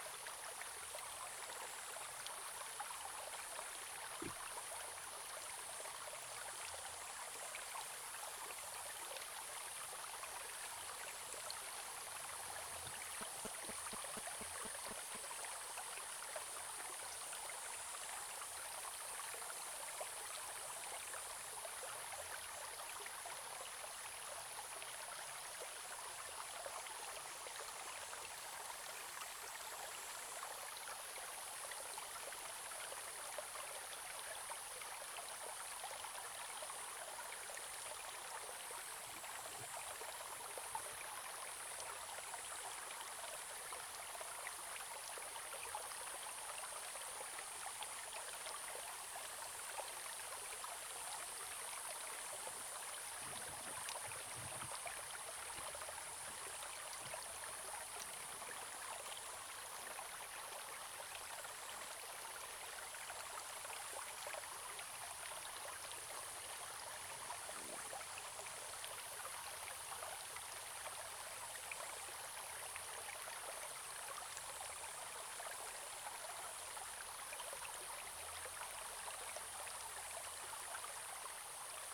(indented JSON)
{
  "title": "種瓜坑溪, 埔里鎮成功里, Taiwan - Flow sound",
  "date": "2016-07-14 10:05:00",
  "description": "Small streams, In the middle of a small stream, Flow sound\nZoom H2n MS+ XY+Spatial audio",
  "latitude": "23.96",
  "longitude": "120.89",
  "altitude": "454",
  "timezone": "Asia/Taipei"
}